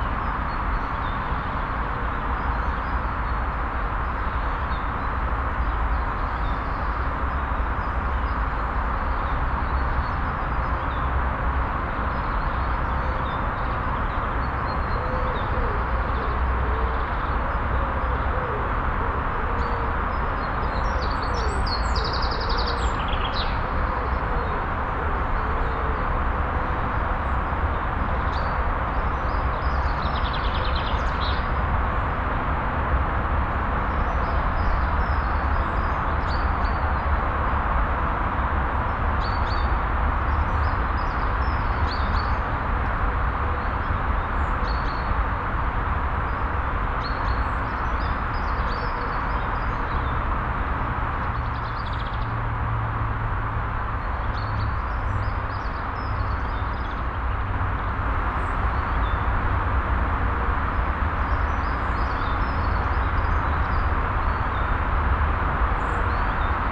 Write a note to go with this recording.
das rauschen der naheliegenden autobahn, ein pferd auf der kleinen umzäunten bauernhofkoppel, vögel im bebüsch, mittags, soundmap nrw/ sound in public spaces - social ambiences - in & outdoor nearfield recordings